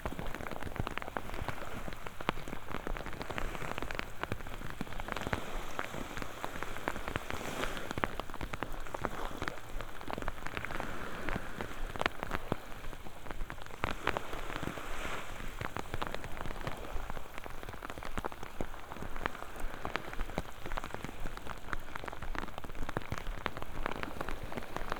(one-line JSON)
{"title": "Kopalino, beach - torrential rain", "date": "2016-05-27 13:43:00", "description": "walking along the sea shore in rain with the hood of my jacket on. rain drops drumming on the fabric, muted sound of the waves. rain easing off. (sony d50 + luhd pm-01bins)", "latitude": "54.81", "longitude": "17.82", "altitude": "6", "timezone": "Europe/Warsaw"}